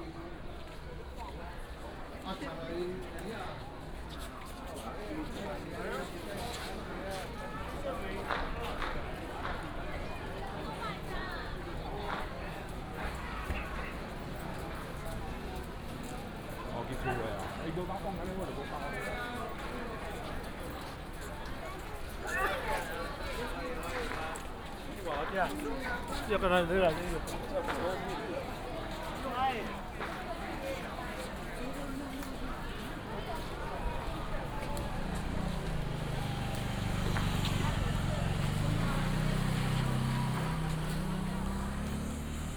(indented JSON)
{
  "title": "白西, 苗栗縣通霄鎮 - Walking in the small village",
  "date": "2017-03-09 10:56:00",
  "description": "Walking in the small village, Fireworks and firecrackers, Traffic sound, Many people attend the temple, The train passes by",
  "latitude": "24.57",
  "longitude": "120.71",
  "altitude": "8",
  "timezone": "Asia/Taipei"
}